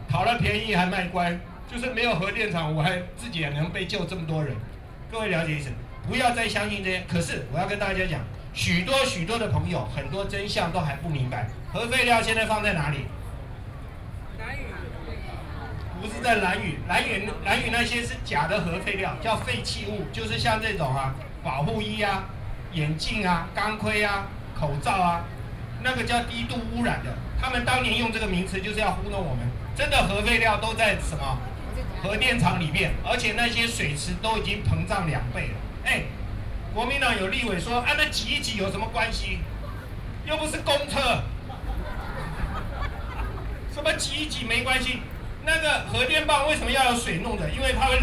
Freedom Square, Taiwan - Antinuclear Civic Forum
Antinuclear Civic Forum, Sony PCM D50 + Soundman OKM II
台北市 (Taipei City), 中華民國